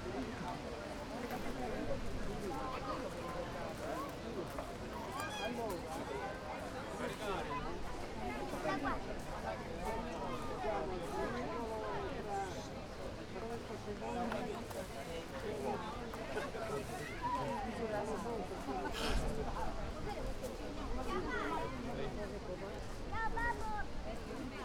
Rome, Janiculum - cannon fire at Gianicolo hill
A cannon is fired daily at Janiculum hill. The tradition started in 1947. Back then the cannon gave the sign to the surrounding belltowers to start ringing at midday. The cannon is fired exactly at noon and the command to fire it is sent via cell phone form one of the Italian atomic clocks. attention - the shot comes about 2:44 and it's really loud compared to the rest of the recording.